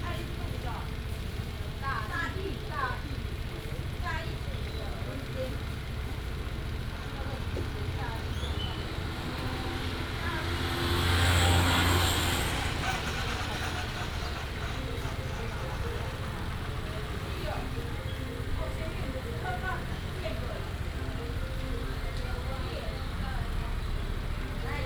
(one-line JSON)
{"title": "新興路42號, Hengchun Township - In front of the restaurant", "date": "2018-04-02 16:15:00", "description": "In front of the restaurant, traffic sound, At the intersection", "latitude": "22.00", "longitude": "120.75", "altitude": "26", "timezone": "Asia/Taipei"}